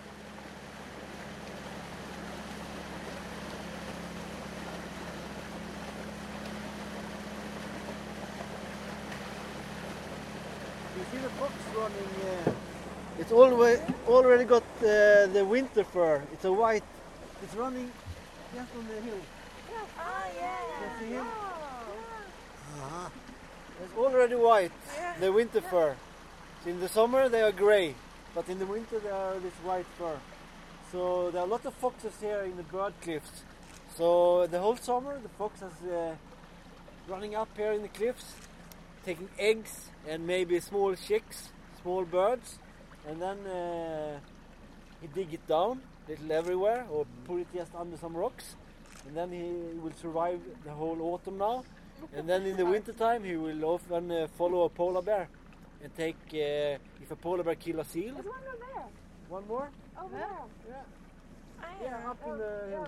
On a sailing trip towards the old abandonned mining town, Grumant, polar foxes cross the landscape.
Longyearbyen, Svalbard and Jan Mayen, 10 September 2011, 15:30